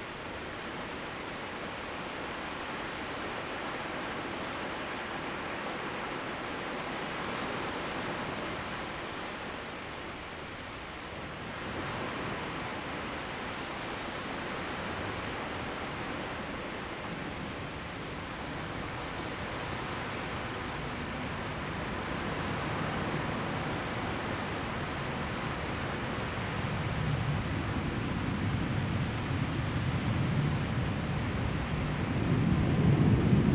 新月沙灣, 新竹縣竹北市 - At the beach
At the beach, Sound of the waves, Zoom H2n MS+XY
Hsinchu County, Taiwan, 21 September 2017, ~10:00